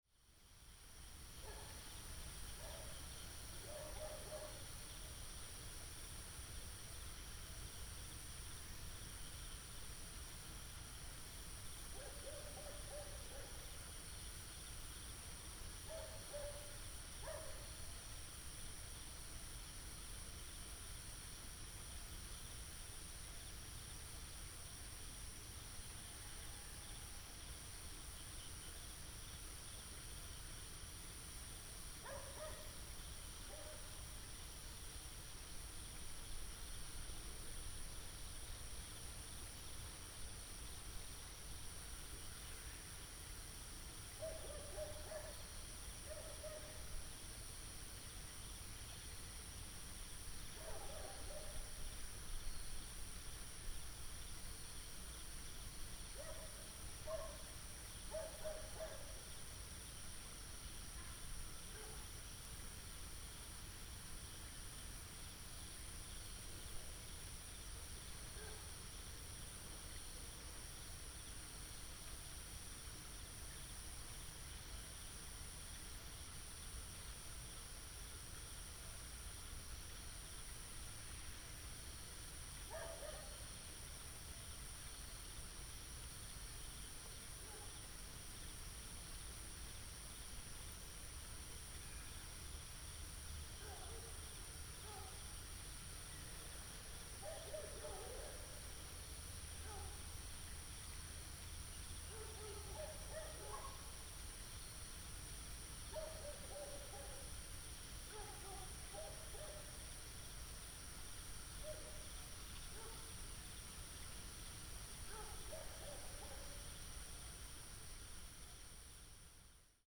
新龍路599號, Xinlong Rd., Xinpu Township - Dog sounds
early morning, Dog sounds, Frogs, Insects, stream, Binaural recordings, Sony PCM D100+ Soundman OKM II
Xinpu Township, Hsinchu County, Taiwan